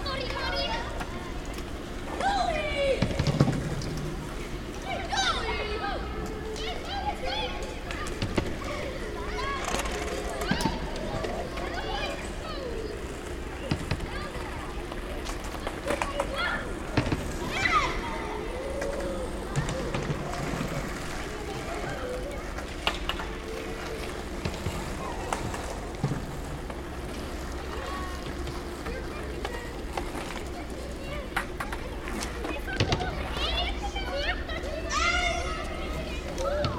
{"title": "Willem Buytewechstraat, Rotterdam, Netherlands - Kids skateboarding", "date": "2022-06-03 13:00:00", "description": "A group of kids practices skateboarding on the small football pitch. A helicopter and a plane join the soundscape too. The architecture of this location creates a reverberant acoustic that seems to amplify the sounds. The recording was made using Uši Pro pair and zoom H8.", "latitude": "51.91", "longitude": "4.46", "altitude": "5", "timezone": "Europe/Amsterdam"}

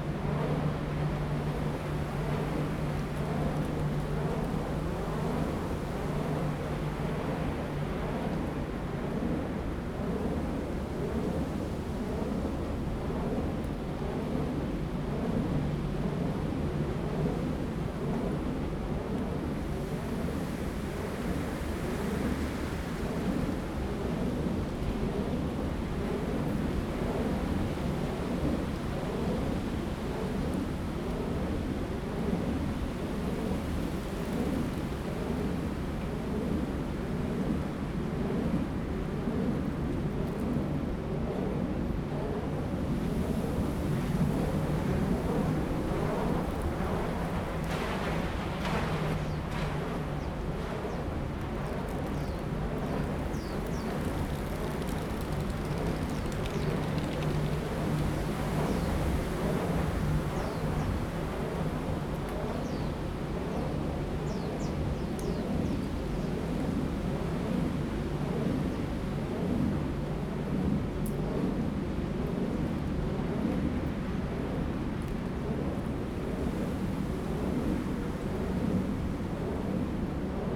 {"title": "崎頂, 竹南鎮 Zhunan Township - Wind", "date": "2017-08-30 12:00:00", "description": "Wind, Wind Turbines, forest, Zoom H2n MS+XY", "latitude": "24.72", "longitude": "120.87", "altitude": "5", "timezone": "Asia/Taipei"}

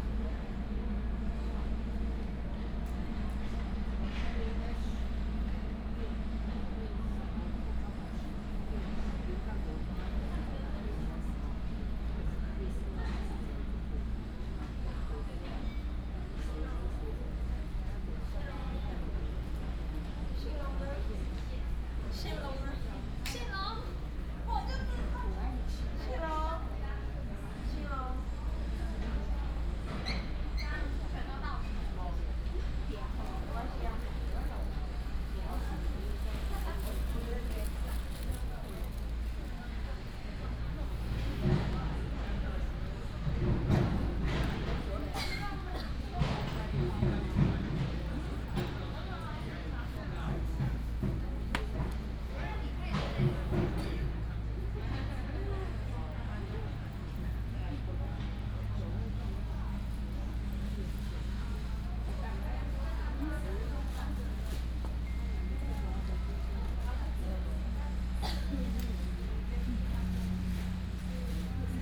{"title": "Zhuzhong Station, Zhudong Township - At the station platform", "date": "2017-01-17 10:46:00", "description": "In the square of the station, The train travels, Construction sound, Traffic sound", "latitude": "24.78", "longitude": "121.03", "altitude": "74", "timezone": "GMT+1"}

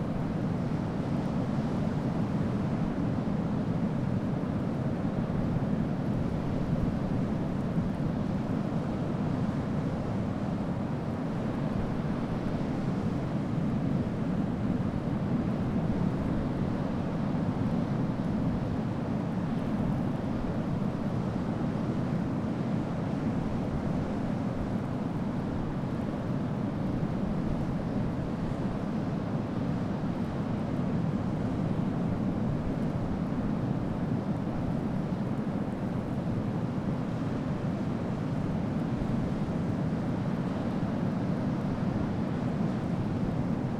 {"title": "Latvia, Ventspils, sea and wind in the dunes - Latvia, Ventspils, seanand wind in the dunes", "date": "2011-08-10 18:15:00", "description": "last recording in Ventspils, cause ten minutes later my recorder was flooded by the seas wave", "latitude": "57.38", "longitude": "21.52", "altitude": "2", "timezone": "Europe/Vilnius"}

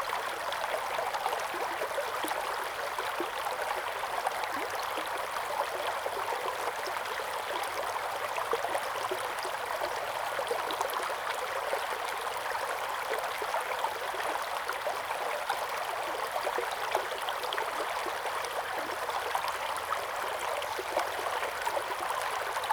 {"title": "中路坑溪, 桃米里 - Flow sound", "date": "2016-05-04 08:50:00", "description": "Flow sound, birds sound\nZoom H2n MS+XY", "latitude": "23.94", "longitude": "120.92", "altitude": "492", "timezone": "Asia/Taipei"}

